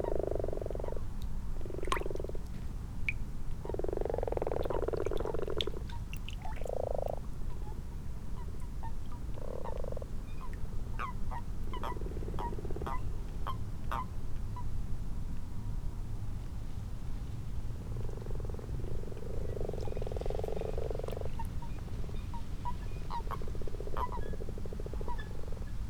Malton, UK - frogs and toads ...
common frogs and toads in a garden pond ... xlr sass on tripod to zoom h5 ... time edited unattended extended recording ... background noise from a cistern filling up ..?